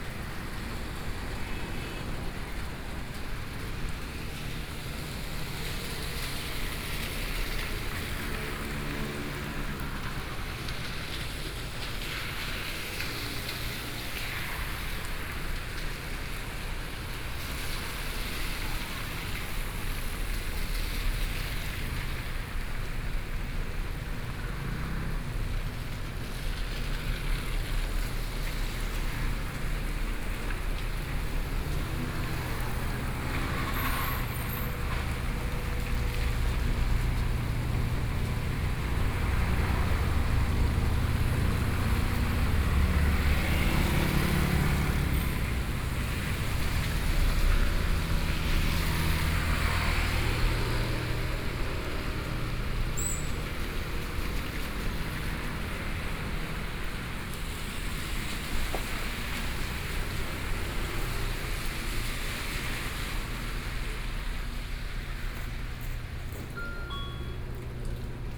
Rainy Day, Traffic Sound, Market selling fruits and vegetables, Binaural recordings, Zoom H4n+ Soundman OKM II
Yilan City, Yilan County, Taiwan